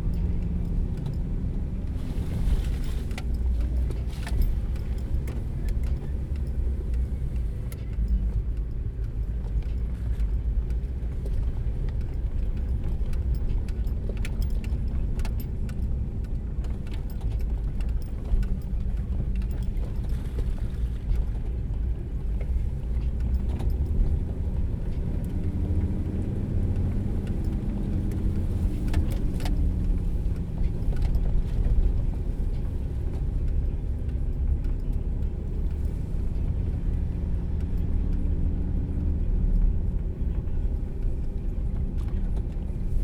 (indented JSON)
{
  "title": "Unnamed Road, Vința, Romania - driving 4wheel jeep up",
  "date": "2017-09-29 13:32:00",
  "description": "in the jeep going back to Rosia Montana",
  "latitude": "46.31",
  "longitude": "23.24",
  "altitude": "899",
  "timezone": "Europe/Bucharest"
}